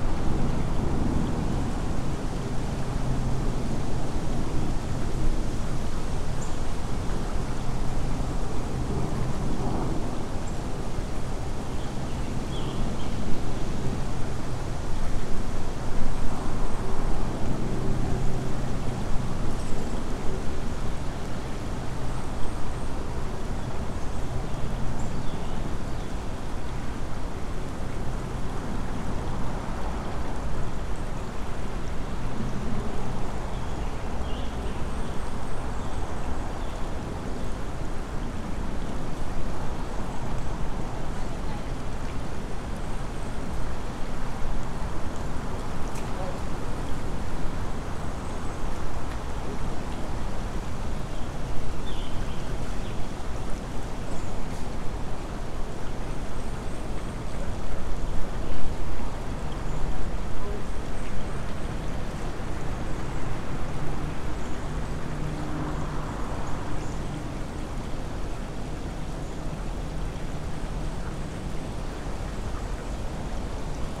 Captured from a narrow footbridge over the Tanyard Creek which connects the Northside Beltline trail to the Atlanta Peace Park. Some people pass by, and you can hear the urban creek slowly trickling. Noise from Collier Road spills into the adjacent greenspace. The mics were taped to the metal railing on the left side. A low cut was administered in post.
[Tascam Dr-100mkiii & Primo Clippy EM-272]
Georgia, United States, 9 May 2021, 16:12